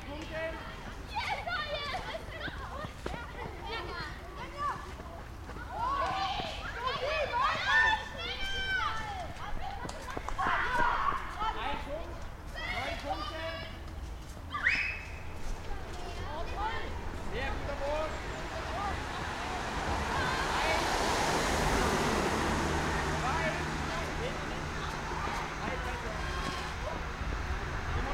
leipzig lindenau, sportanlage friesenstraße
sportfest mit kindern in der sportanlage friesenstraße. kinder und sportlehrer, autos, eichenlaub raschelt am mikrophon.
Leipzig, Deutschland